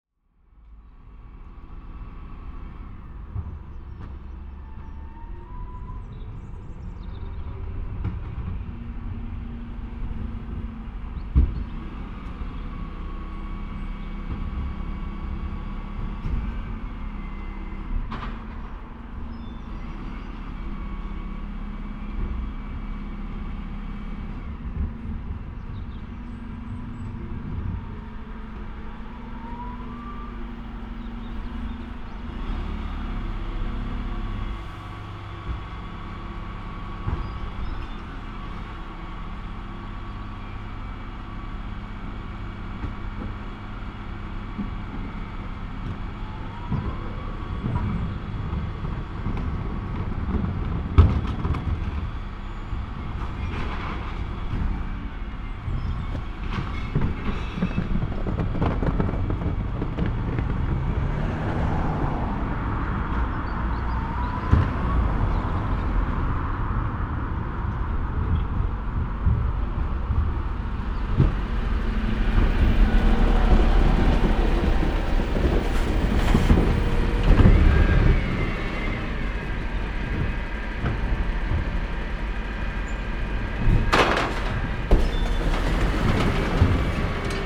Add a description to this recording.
A different bin collection with better and more varied noises. The mics are about 3 metres from the truck as it passes by heading down the street.